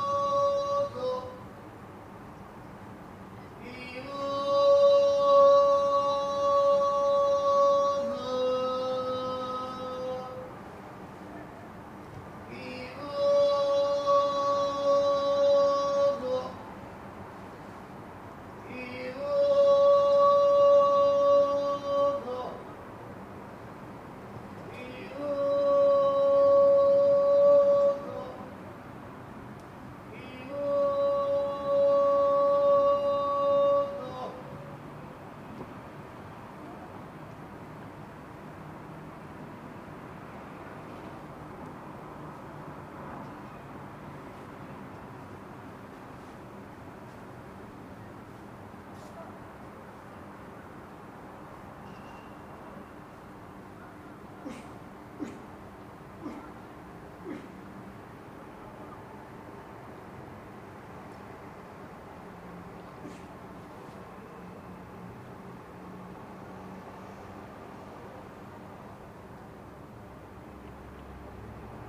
Boza is a drink of fermented wheat, its origins date back to quite long ago. by now not much more than an ottoman atavism, it is rare to hear somebody like this man passing thorugh the streets, vending a home made version from a big metal vessel and serving portions to people at their windows.